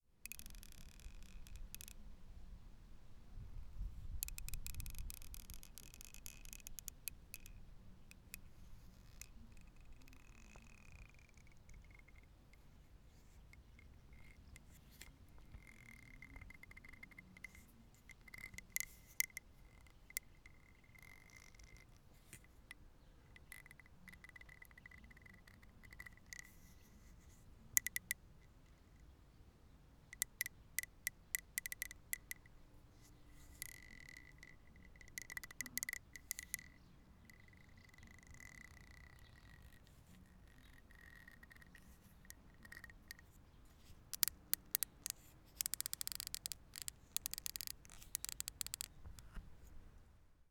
Srem, Andrew's house - plastic stopcock for a garden hose